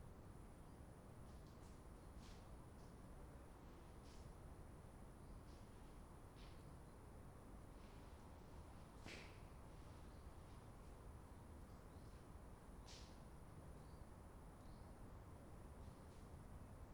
Berlin Wall of Sound, Albrechts-Teerofen 120909
Germany